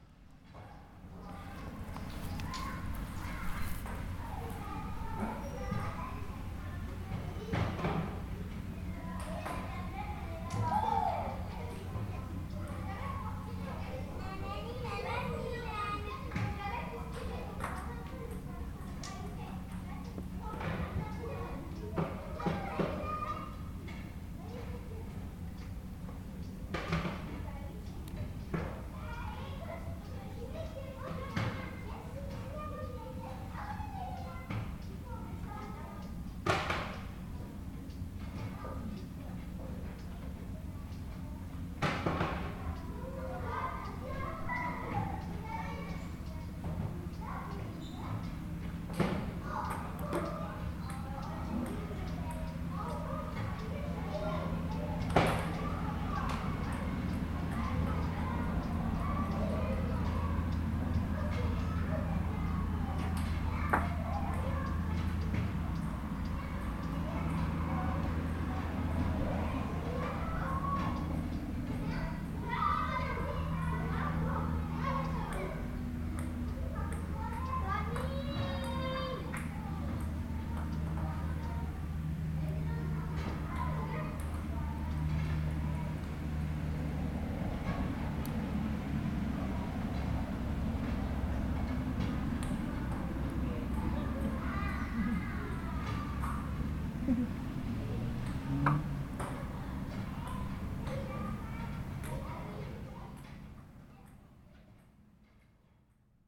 Bd Robert Barrier, Aix-les-Bains, France - Salle de jeux
Sentier près du Sierroz et du CNVA les enfants jouent au ping pong.
France métropolitaine, France, 25 August 2022